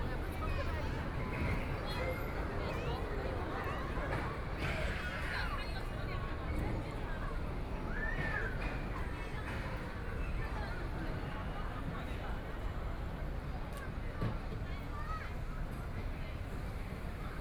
Mechanical sound Recreation Area, The play area in the park, Crowd, Cries, Binaural recording, Zoom H6+ Soundman OKM II
Huangpu, Shanghai, China